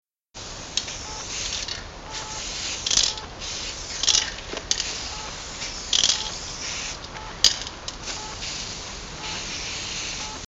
graffiti - hier entsteht nichts! (ohne uns)